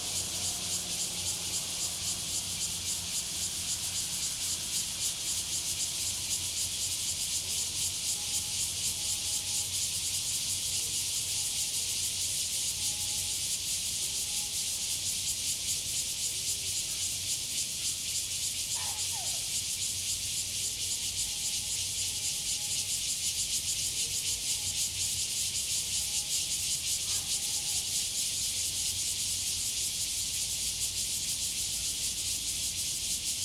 Longsheng Rd., Guanshan Township - Cicadas sound
Cicadas sound, Traffic Sound, Very hot weather
Zoom H2n MS+ XY